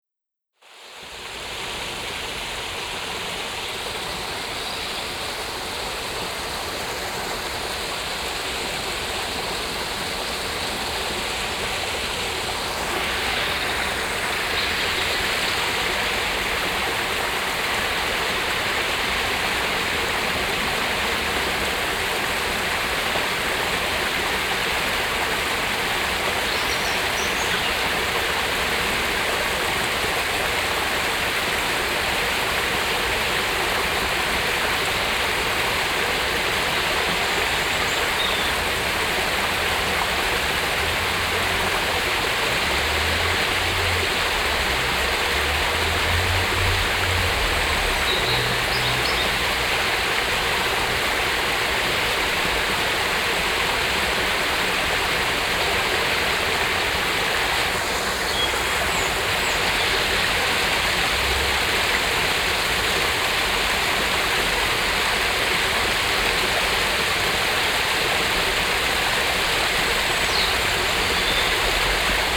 Haarzopf, Essen, Deutschland - essen, rumbachtal, rumbach
Im Landschaftsschutzgebiet Rumbachtal. Der Klang des Rumbachs an einer kleinen Brücke.
In the nature protection zone Rumbachtal. The sound of the smalll stream Rumbach at a small bridge.
Projekt - Stadtklang//: Hörorte - topographic field recordings and social ambiences
Essen, Germany, 4 June, 14:30